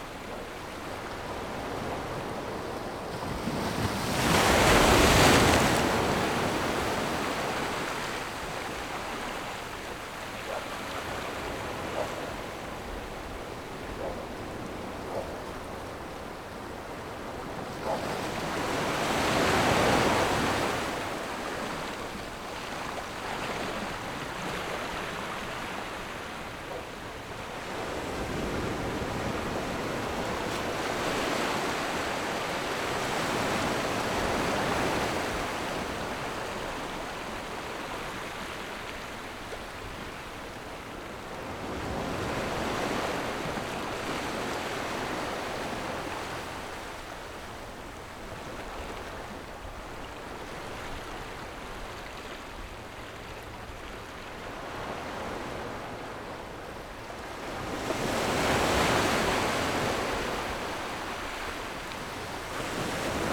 牛角聚落, Nangan Township - Sound wave
Sound wave, On the rocky coast
Zoom H6 +Rode NT4
福建省, Mainland - Taiwan Border, 14 October 2014